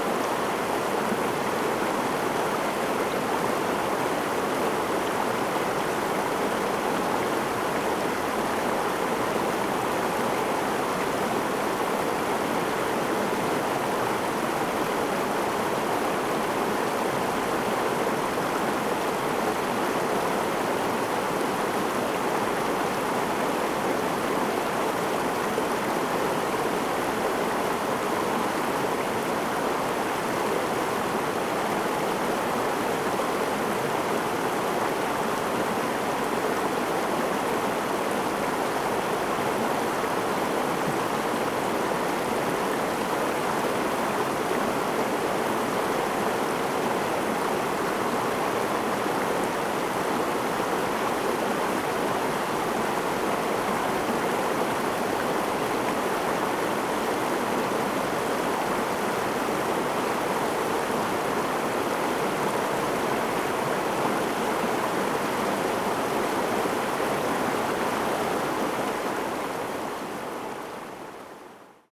The sound of water streams, Very hot weather
Zoom H2n MS+ XY
Taitung County, Taiwan, 7 September 2014